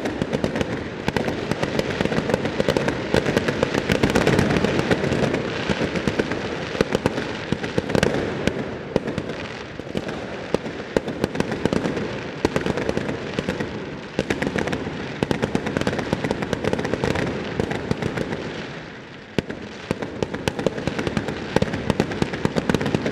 Rotterdam, Pieter de Hoochweg, Rotterdam, Netherlands - New Year´s eve fireworks
This was the second year in a row in which fireworks are forbidden in the Nederlands, allegedly, due to covid-19. With this measure, authorities intend to prevent that people suffer accidents and coming to emergency services to be treated. The previous year, it was possible to hear a few detonations here and there, however, this year people just decided to ignore this measure. Recorded with zoom H8
Zuid-Holland, Nederland